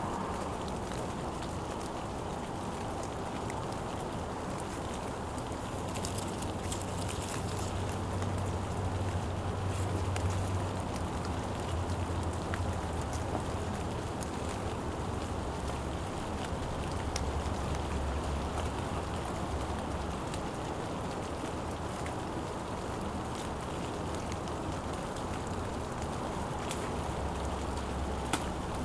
{
  "title": "Diegem, Machelen, Belgio - rainy evening in front of the Holiday Inn entrance",
  "date": "2012-10-25 23:00:00",
  "description": "moderate rain in front of the hotel entrance. drops on the bushes and taxis stopping.",
  "latitude": "50.88",
  "longitude": "4.44",
  "altitude": "47",
  "timezone": "Europe/Brussels"
}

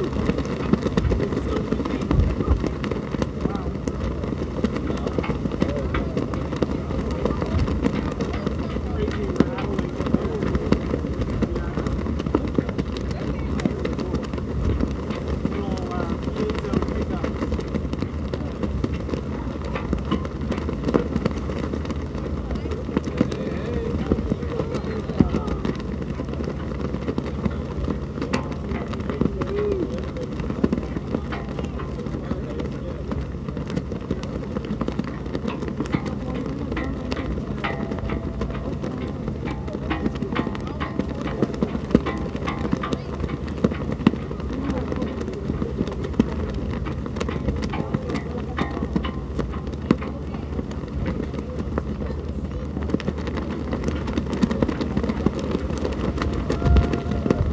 {
  "title": "Craig Rd N, Brooklyn, NY, USA - Hurricane Deck, Staten Island Ferry",
  "date": "2018-04-14 15:30:00",
  "description": "Recording made in the upper deck of the Staten Island Ferry - the Hurricane Deck.\nSounds of the american flag flapping in the wind.",
  "latitude": "40.67",
  "longitude": "-74.05",
  "timezone": "America/New_York"
}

